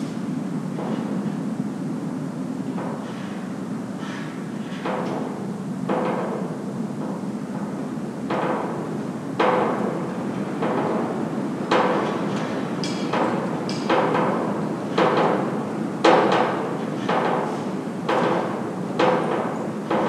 Orford Ness National Trust nature reserve, Suffolk. - Lab 1
Atomic Weapons Research Establishment derelict building (LAB 1). DPA 4060 pair (30cm spacing) / SoundDevices 702.
Woodbridge, Suffolk, UK, 31 January 2016